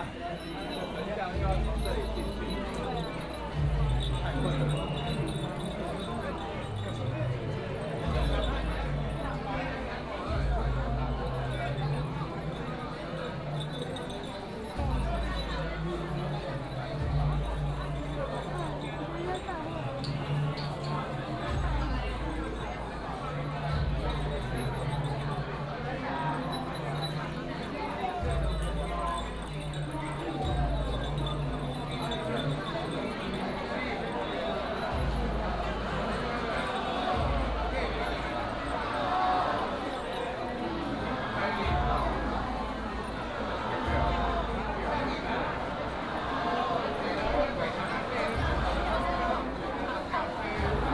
拱天宮, 苗栗縣通霄鎮 - people crowded in the alley
In the temple, people crowded in the alley